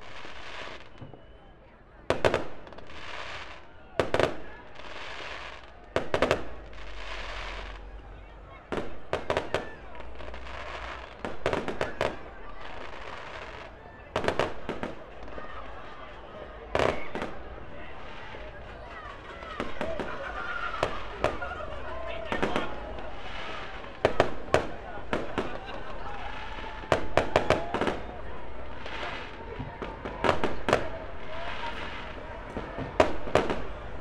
{"title": "Fireworks - 2019 - Av. Eugene Levy 50-52-54, 2705-304 Colares, Portugal - New year 2019 - fireworks", "date": "2018-12-31 23:59:00", "description": "Fireworks announcing the new year 2019, launched from the beach (Praia da Maças). Recorded with a SD mixpre6 and a AT BP4025 XY stereo mic.", "latitude": "38.83", "longitude": "-9.47", "altitude": "19", "timezone": "Europe/Lisbon"}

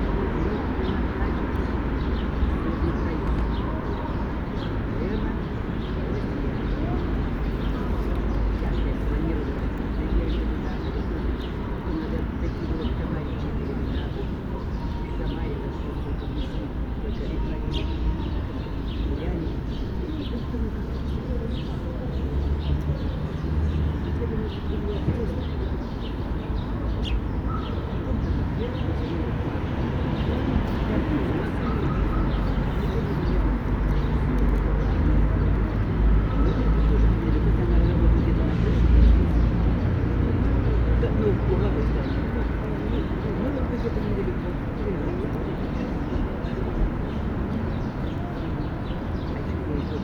{"title": "Ploschad Lenina, Woronesch, Oblast Woronesch, Russland - Lenin", "date": "2014-06-08 13:15:00", "description": "Summer day at noon at Ploschad Lenina, recorded with Olympus LS-14, Stereo Central Mic off", "latitude": "51.66", "longitude": "39.20", "altitude": "158", "timezone": "Europe/Moscow"}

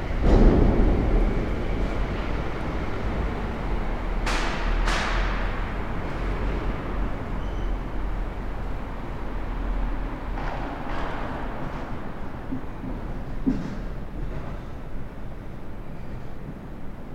{"title": "Pl. Alfonse Jourdain, Toulouse, France - underground parking", "date": "2022-01-14 10:00:00", "description": "right in the center of the square and underground parking\ncaptation : ZOOM H6", "latitude": "43.61", "longitude": "1.43", "altitude": "146", "timezone": "Europe/Paris"}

